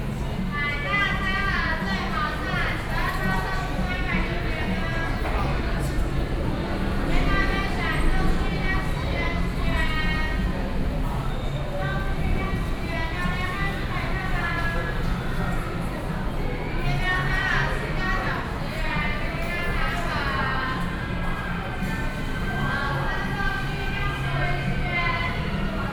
Hsinchu Station - Station hall
in the Station hall, Station broadcast messages, Sony PCM D50 + Soundman OKM II
24 September 2013, ~19:00